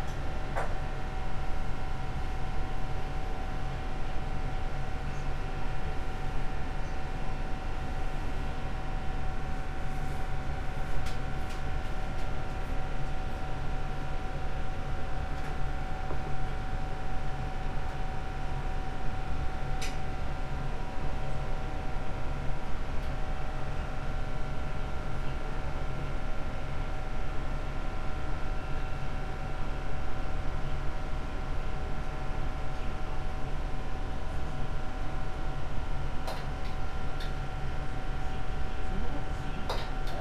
chome asakusa, tokyo - noodle soup restaurant
noodle soup in process of preparation